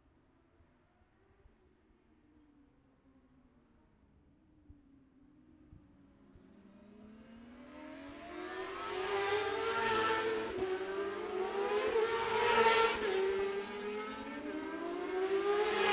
Jacksons Ln, Scarborough, UK - olivers mount road racing ... 2021 ...
bob smith spring cup ... 600cc heat 1 race ... dpa 4060s to MixPre3 ...